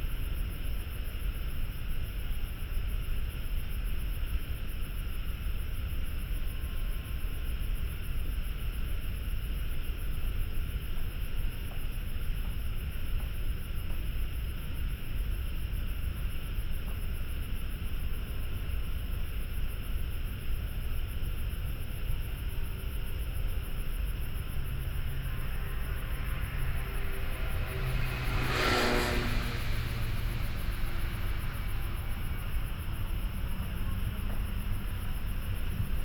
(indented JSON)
{
  "title": "北投區關渡里, Taipei City - Environmental sounds",
  "date": "2014-03-17 18:39:00",
  "description": "Traffic Sound, Environmental sounds, Birdsong, Frogs\nBinaural recordings",
  "latitude": "25.12",
  "longitude": "121.47",
  "timezone": "Asia/Taipei"
}